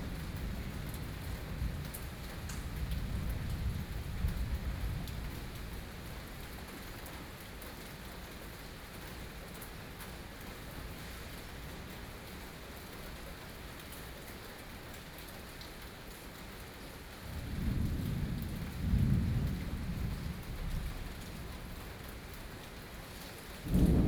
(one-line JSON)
{"title": "Beitou - Thunderstorm", "date": "2013-06-04 12:25:00", "description": "Thunderstorm, Sony PCM D50 + Soundman OKM II", "latitude": "25.14", "longitude": "121.49", "altitude": "23", "timezone": "Asia/Taipei"}